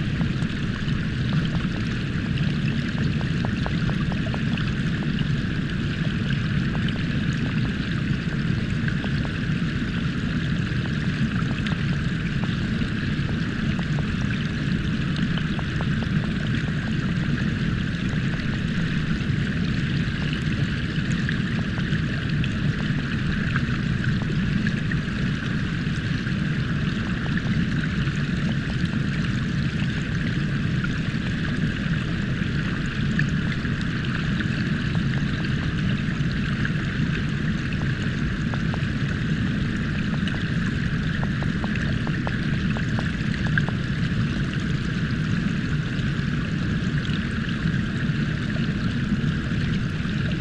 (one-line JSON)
{"title": "Kuldiga, waterfall through hydrophone", "date": "2021-07-12 07:20:00", "description": "Early morning, the best time to visit the place! hydrophones in Venta's waterfall", "latitude": "56.97", "longitude": "21.98", "altitude": "20", "timezone": "Europe/Riga"}